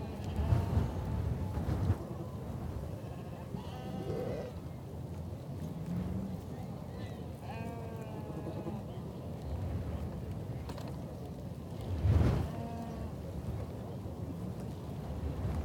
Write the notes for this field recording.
This is a recording of an individual lamb at the Voe Show in Shetland. There was a row of pens with Shetland sheep in them, and at the end of the row, a pen holding a pair of lambs. One of these young lambs was rather boisterous and had a lot to say for itself! I popped my little EDIROL R-09 down on the grass near the pen, hoping that it would catch less of the wind in this position, and left it for a while so that it could record the noisy lamb making its characterful bleats.